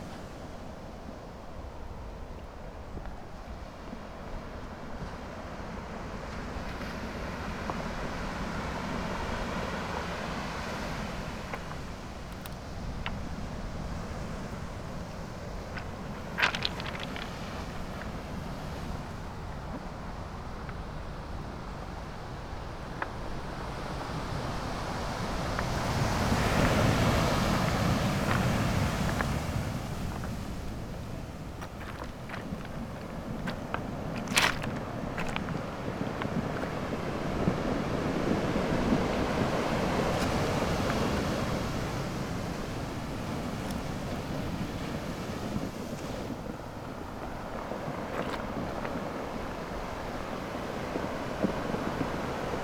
Sao Vicente, rocky beach - towards the ocean
slowly approaching the ocean. beach is filled with rather big rocks. while the waves retract, rocks bounce off each other making a tense, meaty, crunchy sound similar to cracking ice. at the end i went up to close and got washed off my feet by an unexpectedly big wave